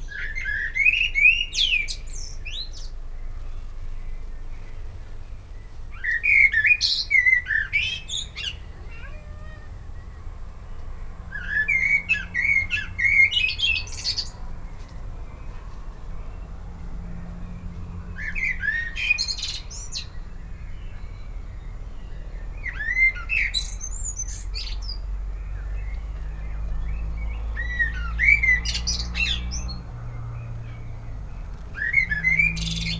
rue de la fontaine billenois, DIJON
birds early in the morning